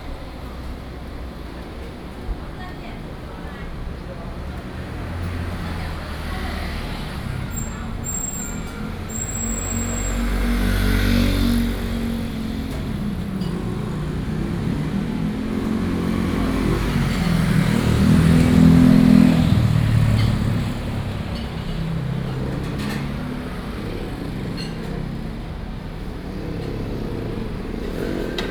Xinmin Rd., 宜蘭市大東里 - At the roadside
At the roadside, Sound from the Restaurant, Traffic Sound, Very hot weather
Yilan County, Taiwan, 7 July 2014, ~18:00